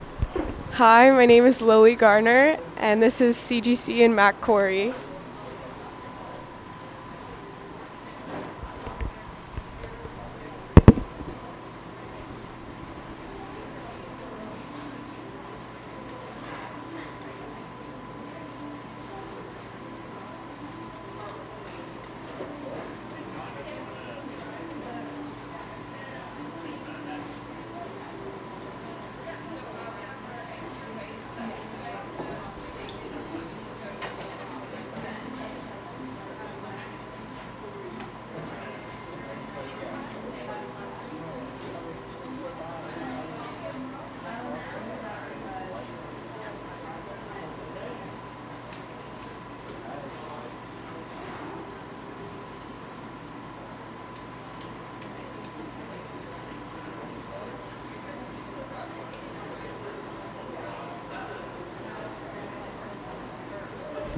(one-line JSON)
{"title": "The Canadian Grilling Company, Mackintosh-Corry Hall, University Ave, Kingston, ON, Canada - The Canadian Grilling Company", "date": "2018-03-20 17:45:00", "description": "Please refer to the audio file for names of the location and the recordist. This soundscape recording is part of a project by members of Geography 101 at Queen’s University.", "latitude": "44.23", "longitude": "-76.50", "altitude": "92", "timezone": "America/Toronto"}